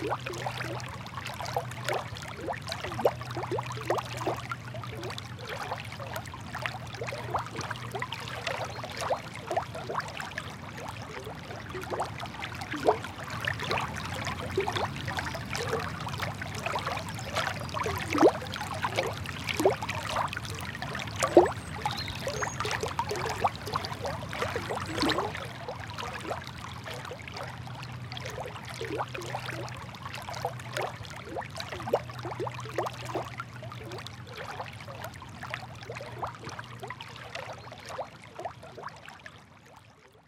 vianden, our, water sound
A little further away from the dam, the Our water movements become more soft again and at this more narrow point starts to gurgle nicely.
Vianden, Our, Wassergeräusch
Etwas weiter weg vom Staudamm wird die Bewegung des Our-Wassers wieder sanfter und an diesem engen Punkt beginnt es schön zu gurgeln.
Vianden, Our, bruit d'eau
Un peu plus bas que le barrage, les mouvements de l’Our redeviennent calmes et l’eau recommence à glouglouter agréablement sur ce rétrécissement.
Vianden, Luxembourg, 18 September 2011